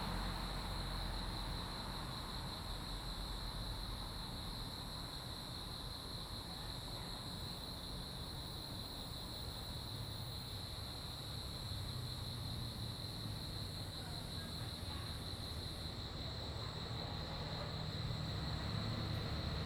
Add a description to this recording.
In the entrance to the park, Sound of insects, Zoom H2n MS+XY